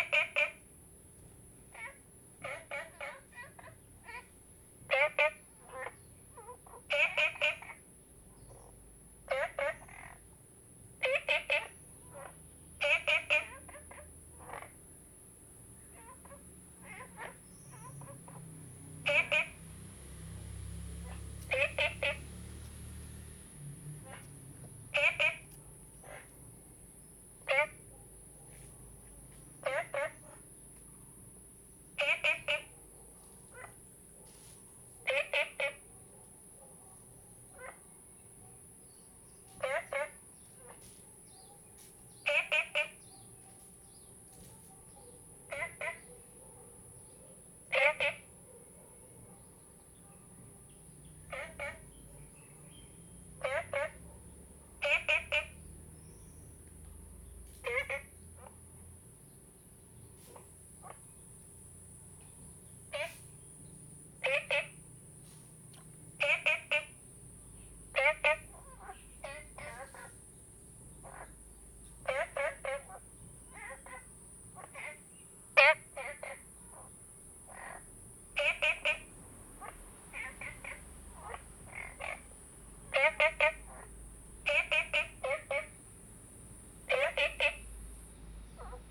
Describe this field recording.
Frogs chirping, Ecological pool, Zoom H2n MS+XY